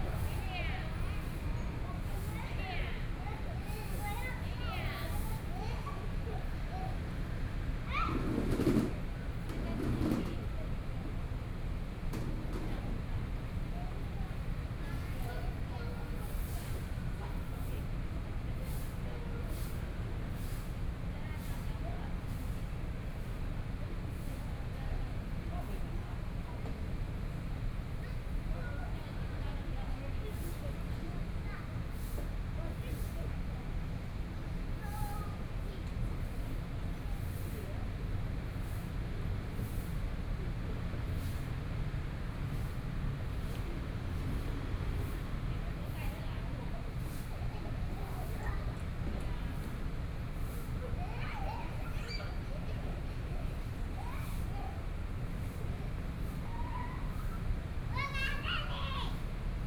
XinXi Park, Taipei City - Children and the elderly
In the park, Children and the elderly, Environmental sounds, Traffic Sound
Please turn up the volume a little
Binaural recordings, Sony PCM D100 + Soundman OKM II